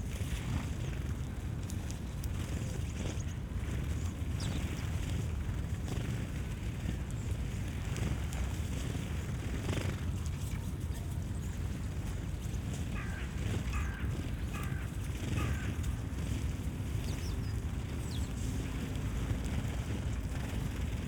dozens of sparrows fluttering on fence, in the bushes, around birdseed, at one of the many little sanctuaries at tempelhof